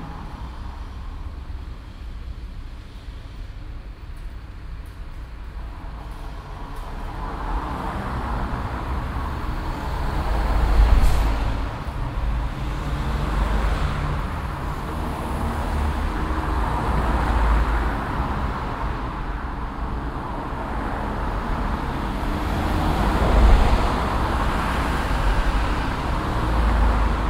cologne, rudolfplatz, verkehr
soundmap: köln/ nrw
rudolfplatz an strassenbahnhaltestelle unter hahntorburg, an und abschwellender verkehr auf steinpflasterstrasse, morgens
project: social ambiences/ listen to the people - in & outdoor nearfield recordings